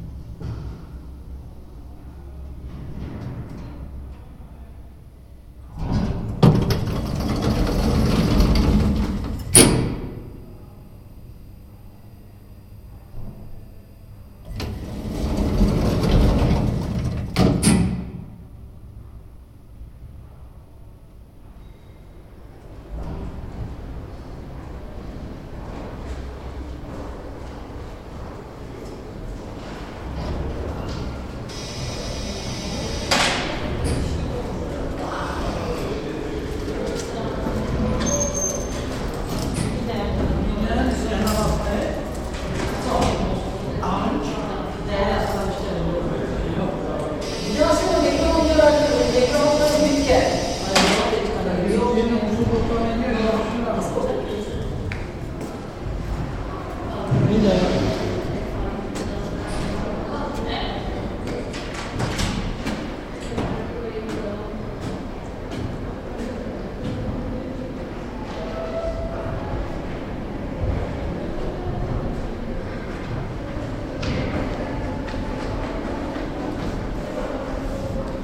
Technical University, Pater noster
paternostyer in the ground floor of the Faculty of Electro/engineering.